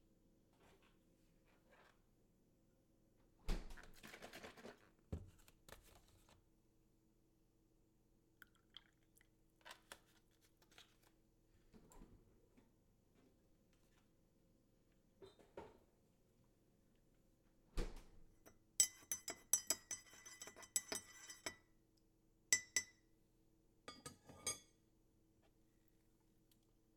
{
  "title": "A kitchen counter in Chestnut Mountain, Georgia, USA - Cuppa",
  "date": "2018-07-24 00:10:00",
  "description": "Does popping a pod of coffee into a Keurig coffee maker count as \"brewing\" coffee?",
  "latitude": "34.17",
  "longitude": "-83.80",
  "altitude": "283",
  "timezone": "America/New_York"
}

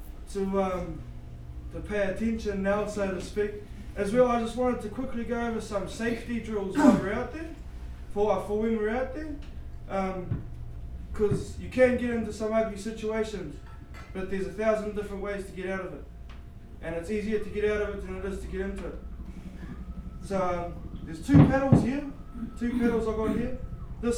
{
  "title": "neoscenes: Putiki boat house briefing",
  "date": "2010-12-10 10:01:00",
  "latitude": "-39.94",
  "longitude": "175.05",
  "altitude": "31",
  "timezone": "Pacific/Auckland"
}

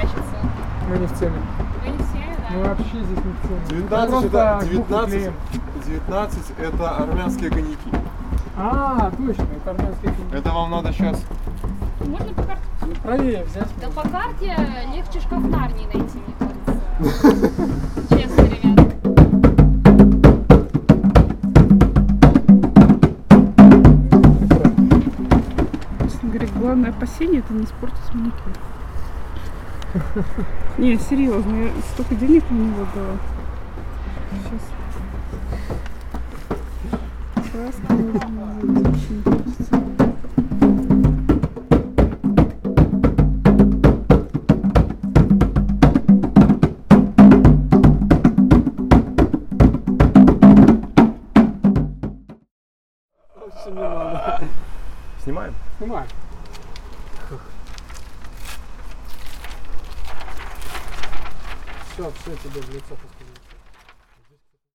{
  "title": "Shaman Jungle at Kastrychnickaya street",
  "date": "2016-08-06 16:20:00",
  "description": "The sounds of a local band Shaman Jungle making an art installation at Kastrychnickaya street, talking to people and performing.",
  "latitude": "53.89",
  "longitude": "27.58",
  "altitude": "198",
  "timezone": "Europe/Minsk"
}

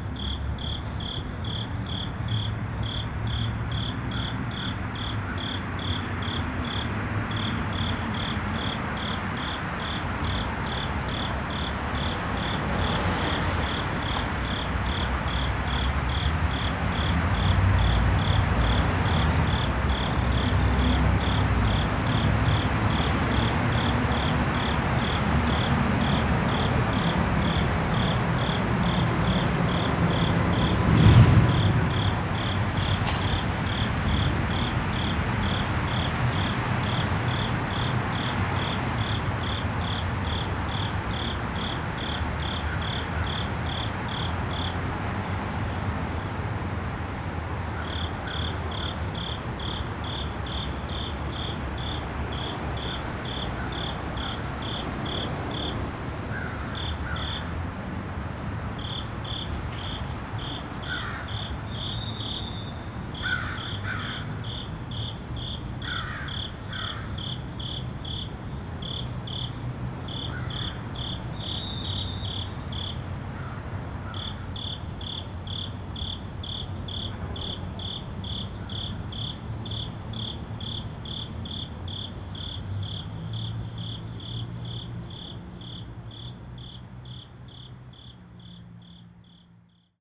{
  "title": "Bolton Hill, Baltimore, MD, USA - Cricket",
  "date": "2016-10-03 08:05:00",
  "description": "Recorded using onboard Zoom H4n microphones. The sounds of a cricket as well as traffic from North Avenue",
  "latitude": "39.31",
  "longitude": "-76.63",
  "altitude": "51",
  "timezone": "America/New_York"
}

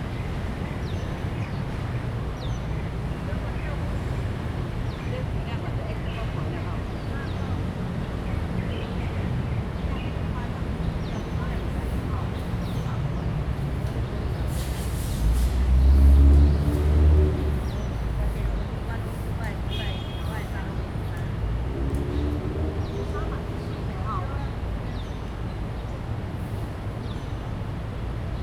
{
  "title": "大安公園, Taipei City - In the park",
  "date": "2015-06-18 17:18:00",
  "description": "In the park, Old people, Sweep the floor\nZoom H2n MS+XY",
  "latitude": "25.04",
  "longitude": "121.54",
  "altitude": "15",
  "timezone": "Asia/Taipei"
}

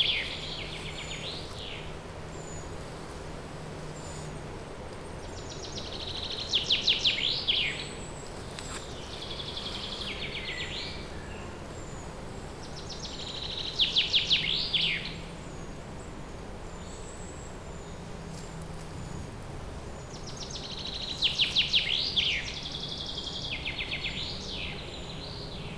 {
  "title": "Bonaforth, Höllegrundsbach Deutschland - Höllegrundsbach 02 no water during summer",
  "date": "2012-05-25 17:42:00",
  "description": "recording in the dry creek bed of the Höllegrundsbach. There is no water during summer or like now hot spring.",
  "latitude": "51.40",
  "longitude": "9.61",
  "altitude": "236",
  "timezone": "Europe/Berlin"
}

